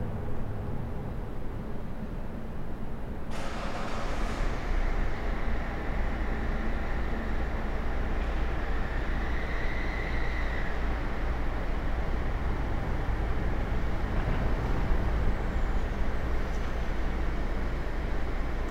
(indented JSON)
{
  "title": "Pl. Alfonse Jourdain, Toulouse, France - underground parking",
  "date": "2022-01-14 10:00:00",
  "description": "right in the center of the square and underground parking\ncaptation : ZOOM H6",
  "latitude": "43.61",
  "longitude": "1.43",
  "altitude": "146",
  "timezone": "Europe/Paris"
}